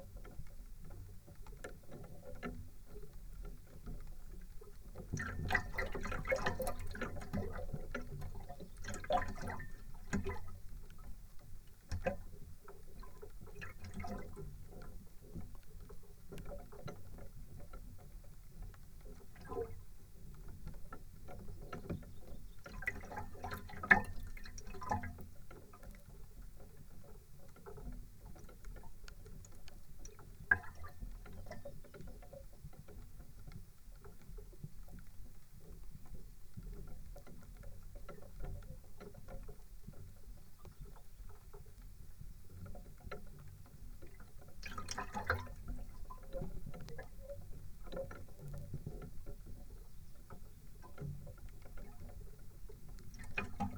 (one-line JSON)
{"title": "Lithuania, Sudeikiai, on pontoon", "date": "2013-05-19 14:10:00", "description": "recorded with contact mics", "latitude": "55.62", "longitude": "25.68", "altitude": "141", "timezone": "Europe/Vilnius"}